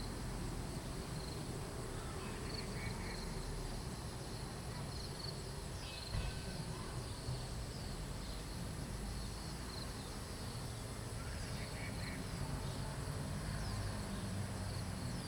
Paper Dome, Taomi, Nantou County - Birds singing
Birds singing, Bell hit, A small village in the evening
Zoom H2n MS+XY
Puli Township, 桃米巷54號, 11 August 2015